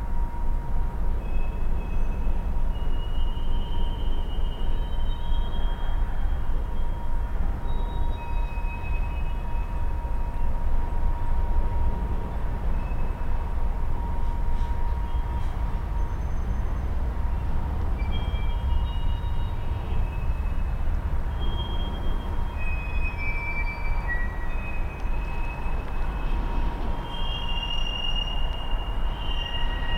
Ostrava-Mariánské Hory a Hulváky, Česká republika - Oni si hrajou
On the cargo station with a friend, but alone in a mysterious place.